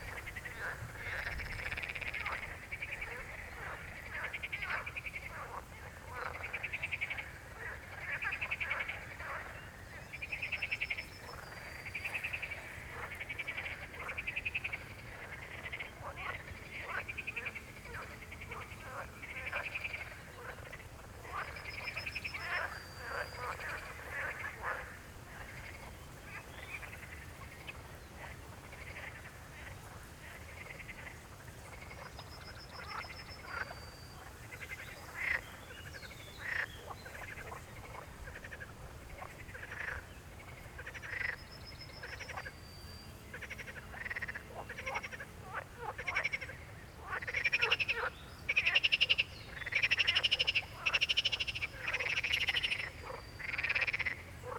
Odervorland Groß Neuendorf-Lebus, Deutschland - pond, frogs
pond near village Groß-Neuendorf, early evening frog concert
(Sony PCM D50, DPA4060)
Letschin, Germany, 31 May 2015, 18:35